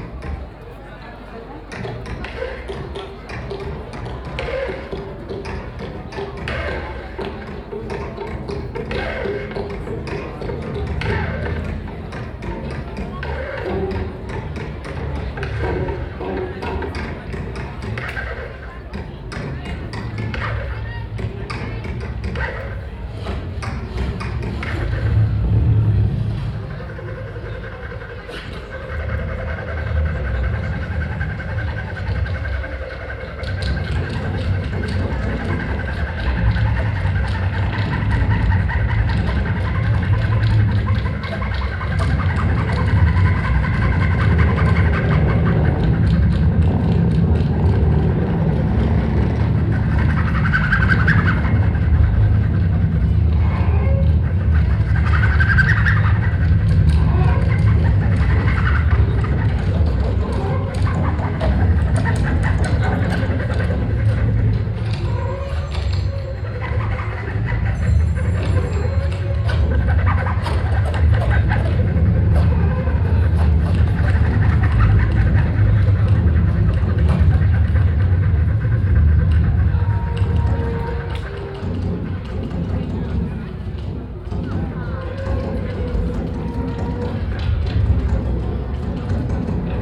At the street during the Cluj City Festival Cilele 2014. A second recording of sound of the french performance group - scena urbana - point of view.
/276204512560657/?ref=22
international city scapes - field recordings and social ambiences